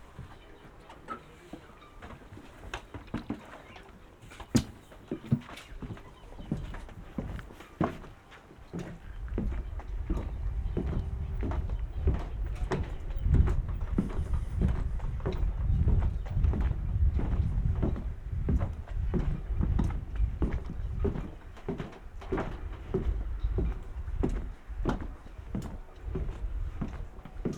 workum, het zool: marina, berth h - the city, the country & me: marina berth
short soundwalk over marina berth
the city, the country & me: august 1, 2012